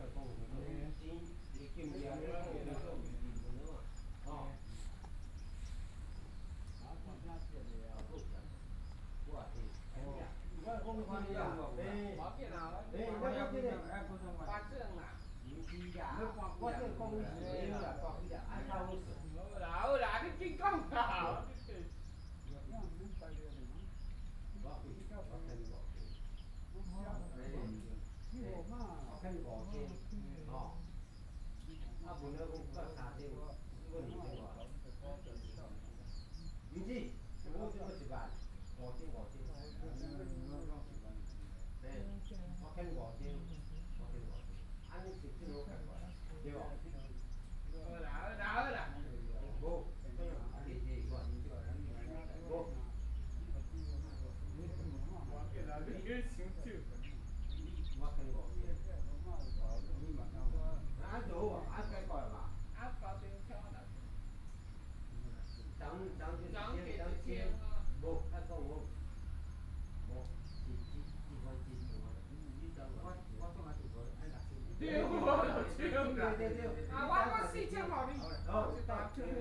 Riverside Park, A group of people to chat, Hot weather, Traffic Sound